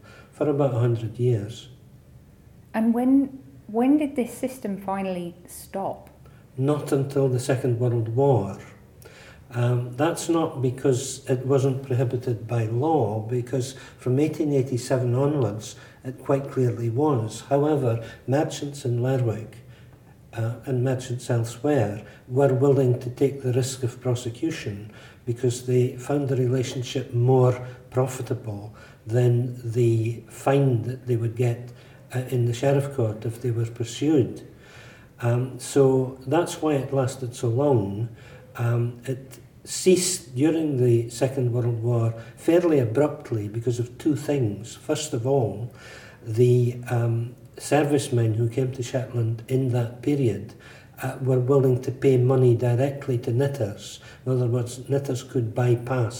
Shetland Museum & Archives, Hay's Dock, Shetland Islands, UK - Excerpt of interview with Brian Smith, talking about Truck
This is an excerpt of a discussion between myself and Brian Smith in the Shetland Museum, about the horrendous Truck system. The Truck system was in operation in Shetland between the 1840s and the 1940s, and was an exploitative relationship between merchants and knitters, whereby knitters were paid in useless goods like sweets and tea for their amazing handiwork. These women were then forced to barter these useless goods with farmers and other merchants for stuff they could actually eat, like bread and potatoes. Brian Smith is the archivist at the Shetland Museum and Archives and is very knowledgeable about Truck. Here he talks about how the Truck system operated even after it was officially made illegal.
3 August 2013, 16:30